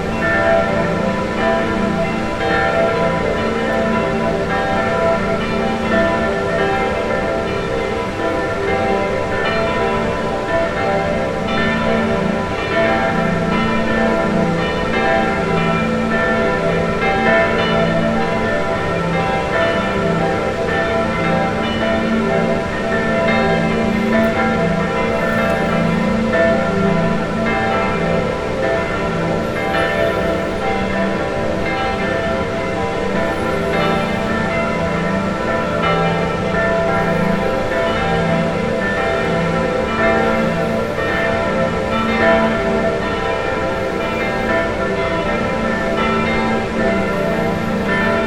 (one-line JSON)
{"title": "Bad Gastein, Österreich - osterglocken & wasserfall", "date": "2015-04-04 20:38:00", "description": "osterglocken & wasserfall, von der villa solitude aus", "latitude": "47.12", "longitude": "13.13", "altitude": "998", "timezone": "Europe/Vienna"}